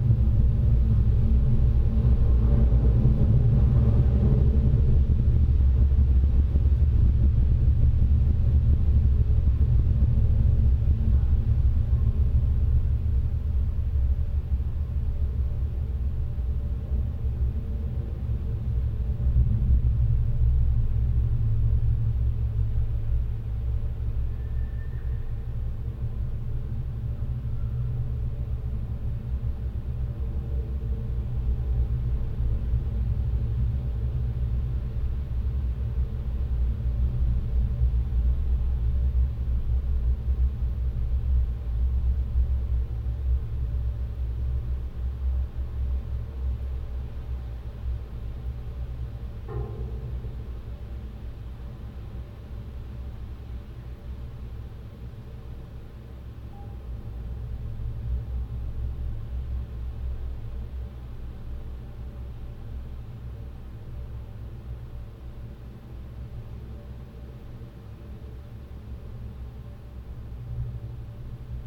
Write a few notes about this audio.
water pumping station. geophone on metallic structure and very small microphones inside. heavy amplified sounds.